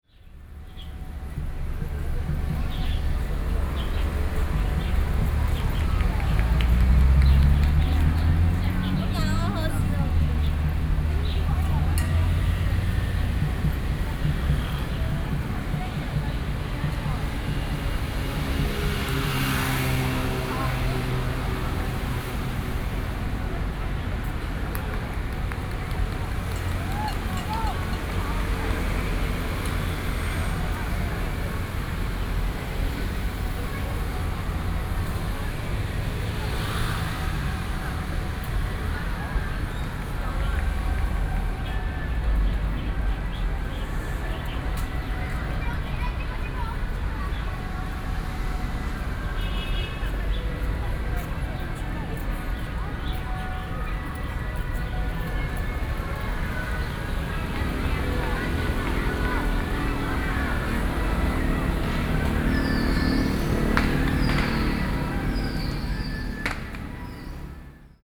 Xining S. Rd., Wanhua Dist., Taipei City - The side of the road
The side of the road, Traffic Noise, Pedestrian, Binaural recordings, Sony PCM D50 + Soundman OKM II, ( Sound and Taiwan - Taiwan SoundMap project / SoundMap20121115-12 )
Taipei City, Taiwan, 15 November 2012